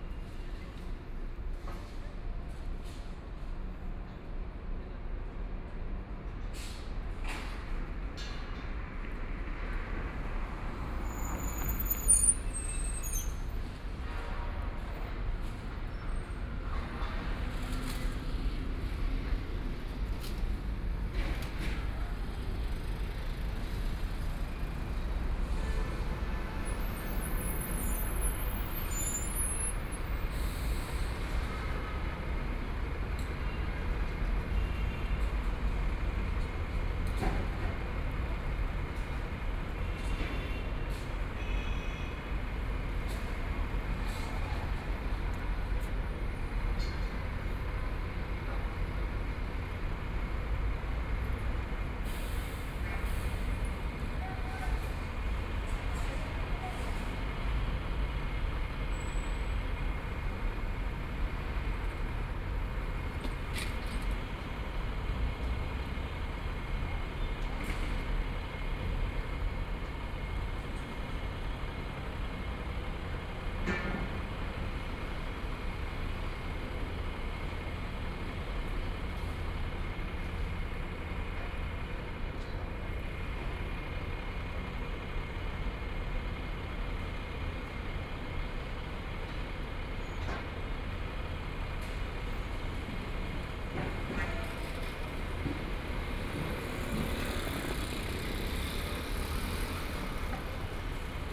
Tianjin Road, Shanghai - Noise on the road
Construction site sounds, Traffic Sound, Binaural recording, Zoom H6+ Soundman OKM II
Shanghai, China